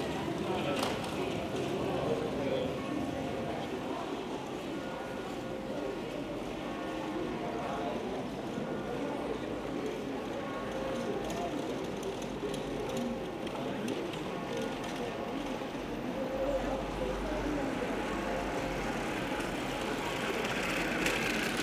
Mechelen, Belgique - Old persons visiting Mechelen
On a quiet Sunday morning, a group of old persons is visiting Mechelen. They are walking in the old cobblestones streets, discussing and laughing about anything. Far away, the OLV-over-de-Dijlekerk bells are ringing.
Mechelen, Belgium, 21 October 2018